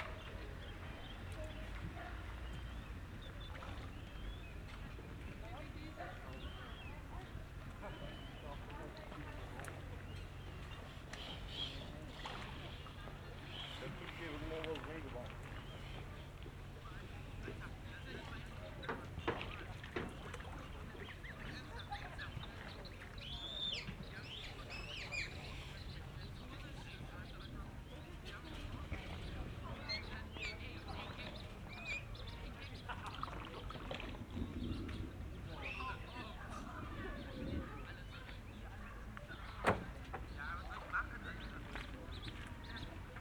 approaching thunderstorm
the city, the country & me: august 4, 2012
Workum, The Netherlands, 4 August 2012